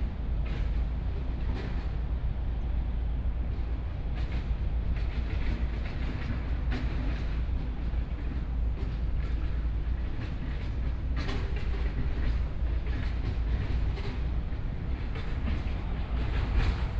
Construction noise, Zoom H4n+ Soundman OKM II